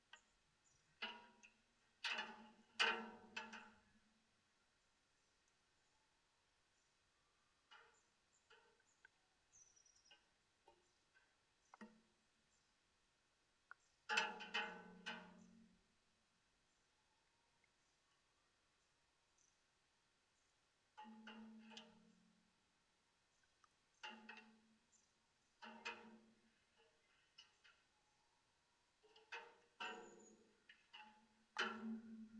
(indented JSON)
{
  "title": "Lithuania, Vilnius, rain drops on metal",
  "date": "2012-11-06 12:50:00",
  "description": "some kind of metallic watchtower and rain on it...recorded with contact mics",
  "latitude": "54.69",
  "longitude": "25.30",
  "altitude": "150",
  "timezone": "Europe/Vilnius"
}